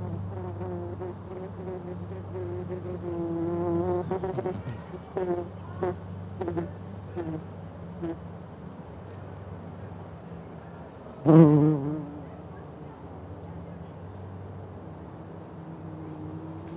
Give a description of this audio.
Towards the end of a soundwalk that myself and a colleague were leading as part of a field recording course, our little group ran into an apiarist who had been setting up a beehive in the University grounds as part of an architectural research programme. He was very talkative about this project and I was tired, so am ashamed to say that I zoned out from what he was saying. I was sort of idly staring into space and not really listening, when I noticed that a number of lovely fat, fuzzy bees were going in and out of a tiny hole in the soil. I think they are mining bees. I watched closely for a little while while the apiarist (oblivious!) carried on talking loudly about his research. I realised there was a hole close to where the bees were moving in and out of the ground which I could poke one of my omni-directional microphones into, and so I did this, and listened closely while what seemed to be three bumble bees came and went out of their wee dwelling in the ground.